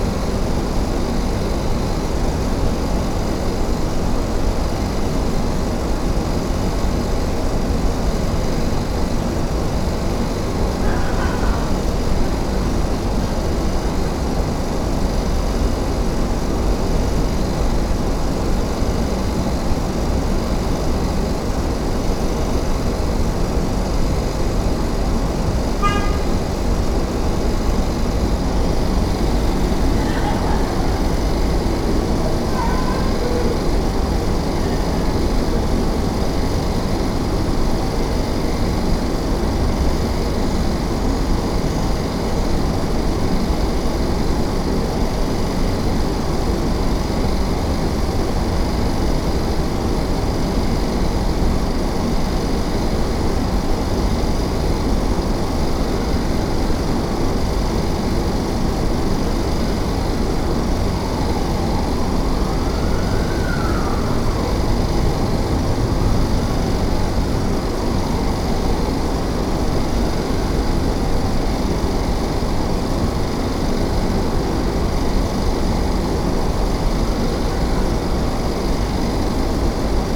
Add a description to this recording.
recorded in the back of a restaurant, near the delivery ramp and staff entrance. you can hear staff laughs and conversations through the buzz of AC units. (roland r-07)